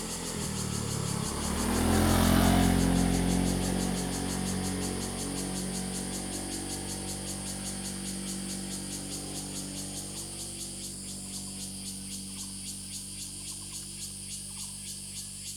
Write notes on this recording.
Morning in the mountains, Cicadas sound, Birdsong, Traffic Sound, Zoom H2n MS +XY